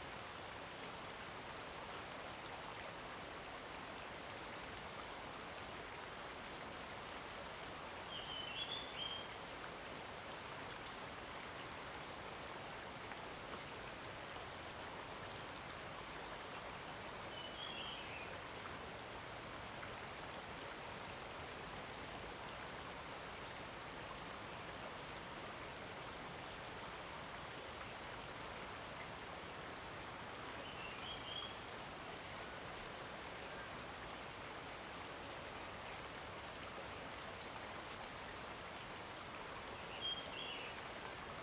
種瓜路桃米里, Puli Township - Streams and birds sound
Streams and birds sound, Faced farmland
Zoom H2n MS+XY